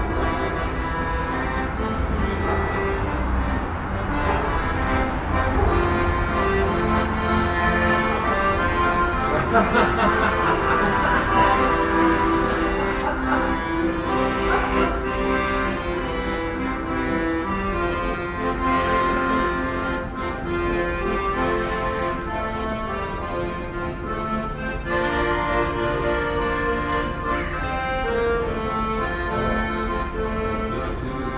Hamm Westen, Germany - Elke Peters Ständchen
… Elke Peters stands on her balcony, plays accordion and sings… the sounds bounce of from the walls between the houses… travelling through the yards… also to the neighbor whose birthday is to be celebrated…